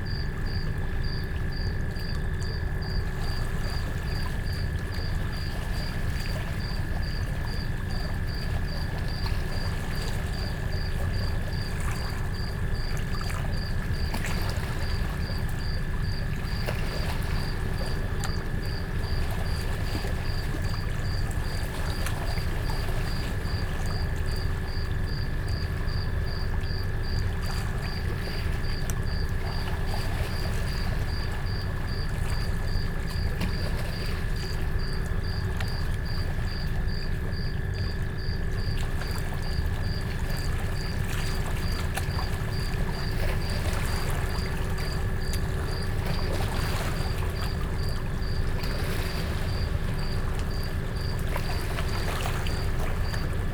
Lake shore, Kariba Lake, Sinazongwe, Zambia - last night before full-moon break...
last night of fishing before the moon change is always pretty busy...